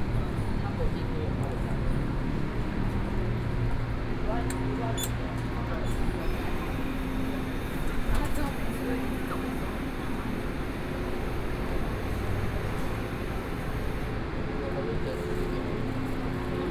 Zhongli Station, Taoyuan County - in the Station hall
in the Station hall, Sony PCM d50+ Soundman OKM II